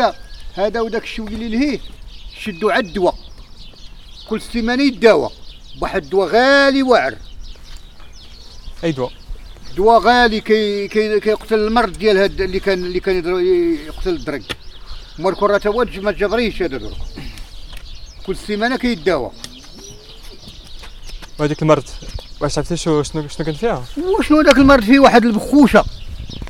Laâssilat, Maroc - Habitants parlent du fléau des cochenilles
Des habitants du hameau parlent de l'arrivée du fléau des cochenilles. Les animaux les mangent et deviennent rouge.
Son pris par Kaïs et Mina.
Nnass mn lhameau kay char7o 3ala lmossiba dial 7achara. Al7ayawan kay yakolhom o kay weli 7mar.
Province Nouaceur إقليم النواصر, Casablanca-Settat ⵜⵉⴳⵎⵉ ⵜⵓⵎⵍⵉⵍⵜ-ⵙⵟⵟⴰⵜ الدار البيضاء-سطات, 28 February 2021, 16:00